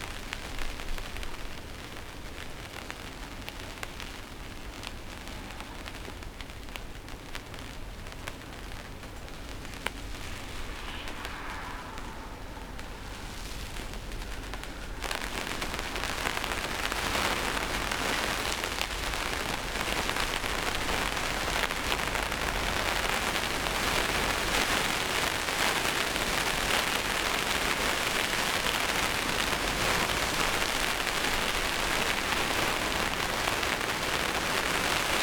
Chapel Fields, Helperthorpe, Malton, UK - inside poly tunnel ... outside stormy weather ...
inside poly tunnel ... outside stormy weather ... dpa 4060s to Zoom H5 ... mics clipped close to roof ...